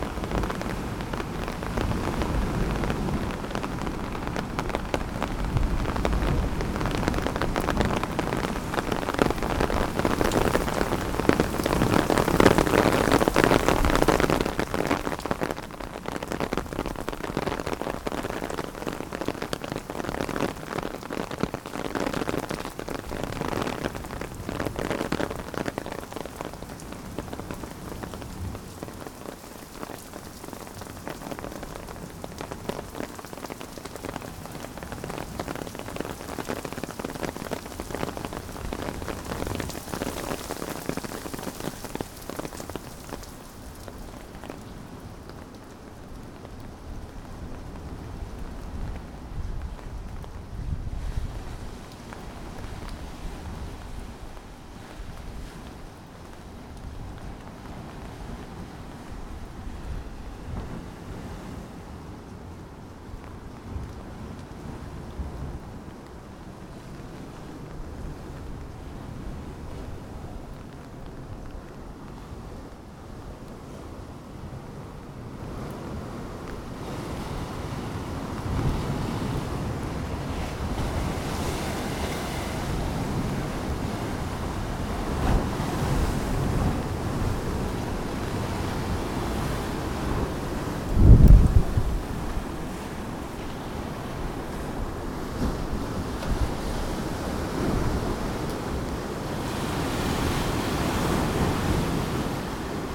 slowly walking through the doors of city walls; umbrella, drops, rain; heavy wavy and windy situation